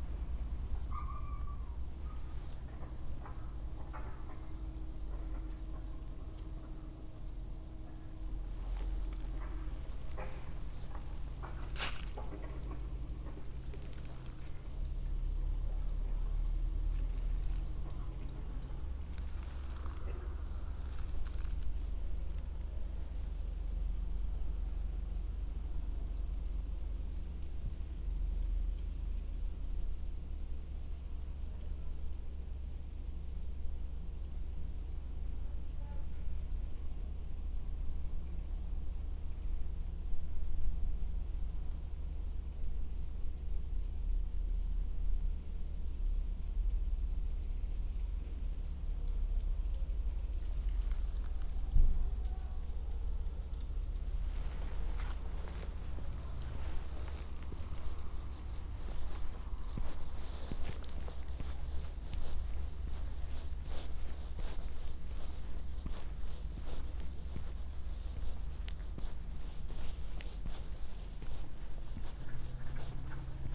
Grožnjan, Croatia - situation with two cats
two cats, one of them silent, walk, cars and toot, passer by, trash can, dry leaves